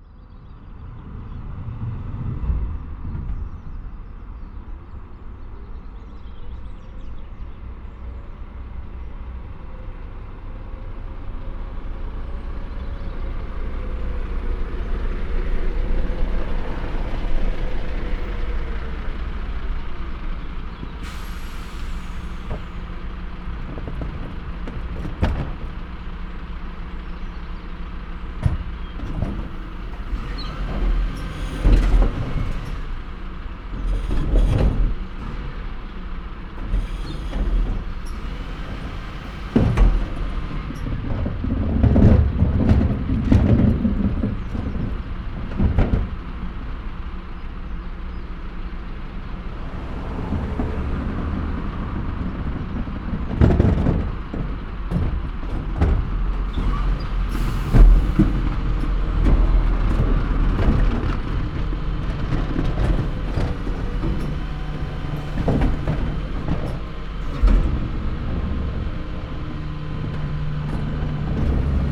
The Bin Men, Malvern Worcestershire, UK - The Bin Men
Every 2nd Thursday garden rubbish is collected by a special truck from large wheeled bins left out in the street.
West Midlands, England, United Kingdom, April 2021